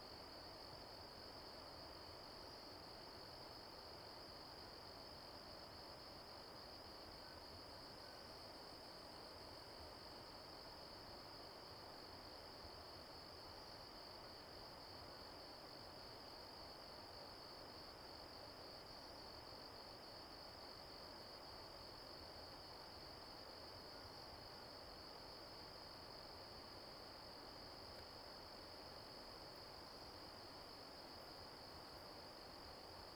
土坂, 達仁鄉台東縣, Taiwan - Late night in the woods
Stream sound, Late night in the woods, Bird call, Insect cry
Zoom H2n MS+XY
Taitung County, Taiwan, 6 April, 02:04